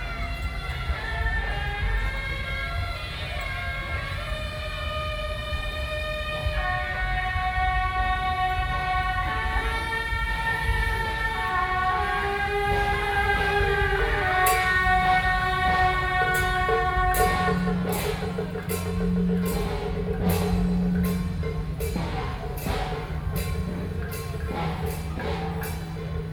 Taiwan, Taipei city - Traditional temple festivals
Firework, Traditional temple festivals, Traditional musical instruments, Binaural recordings, Sony PCM D50 + Soundman OKM II, ( Sound and Taiwan - Taiwan SoundMap project / SoundMap20121115-6 )